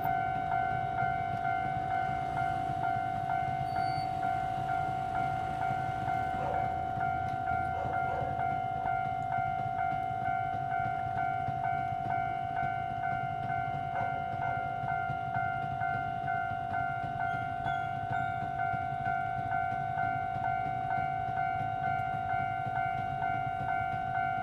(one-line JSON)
{"title": "Changshun St., Changhua City - the railroad crossing", "date": "2017-02-15 15:09:00", "description": "On the railroad crossing, The train runs through, Traffic sound\nZoom H2n MS+XY", "latitude": "24.09", "longitude": "120.55", "altitude": "24", "timezone": "GMT+1"}